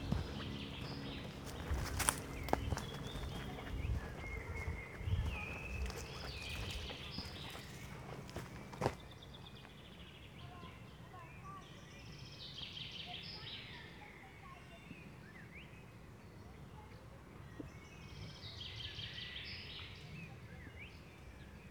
Beselich-Niedertiefenbach, Deutschland - walk in the forest
Summer evening, wlking in the forest near village, ambience
(Sony PCM D50, Primo EM172)
3 July 2015, 21:00